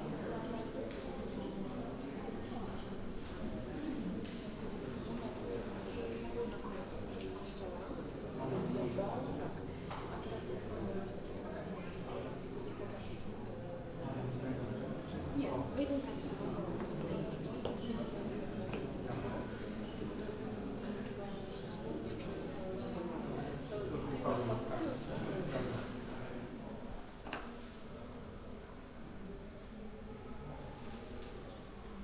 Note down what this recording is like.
guests entering the church, taking seats, talking, parents hushing up kids. the ceremony is about to begin.